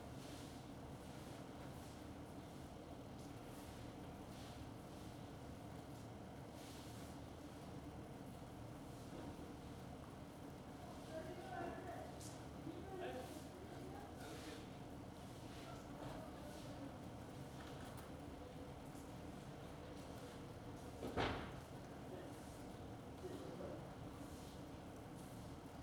Carrer de Joan Blanques, Barcelona, España - Rain25032020BCNLockdown
Rain field recording made from a window during the COVID-19 lockdown.
Catalunya, España, 25 March